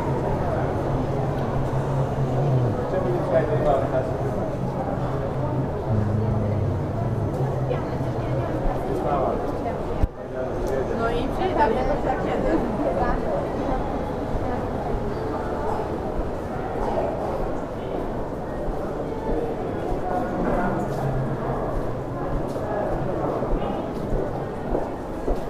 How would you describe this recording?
Soundwalk from canteeen at Dąbrowszczaków street, down to Piłsudskiego alley. Pedestrian crossing near city hall. Entrance to Alfa shopping center. Walk through shopping mall. Return the same way.